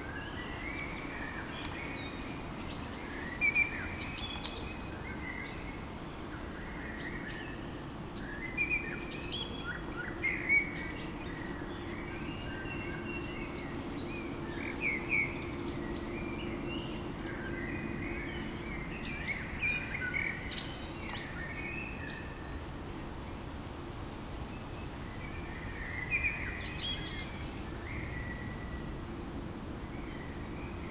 Beselich Niedertiefenbach, Ton - evening ambience
place revisited, warm summer evening
(Sony PCM D50, internal mics)
Germany